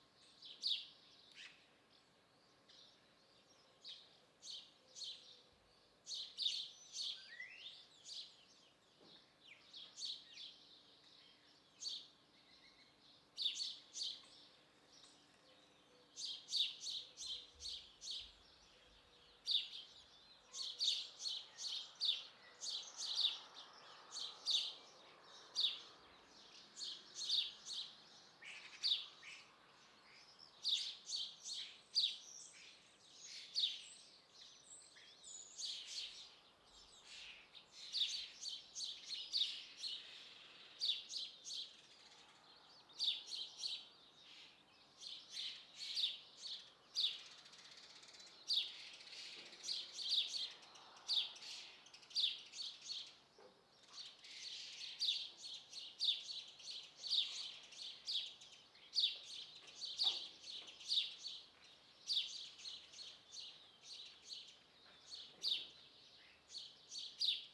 Carrer Tramuntana, Bellcaire d'Empordà, Girona, Espagne - Bellcaire d'Empordà, Girona, Espagne
Bellcaire d'Empordà, Girona, Espagne
Ambiance du matin
Prise de sons : JF CAVRO - ZOOM H6